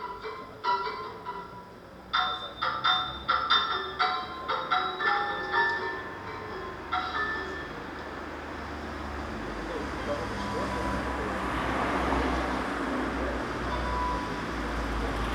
berlin: bürknerstraße - bring it back to the people: nearby aporee project room
transistor radio on the pavement during udo noll's pirate radio transmission of the aporee john cage birthday event on fm 98,8 and the performance of 4'33 at aporee project room (here you hear excerpts of the film "4 american composers. vol. 1: john cage" directed by peter greenaway in 1983)
bring it back to the people: september 5, 2012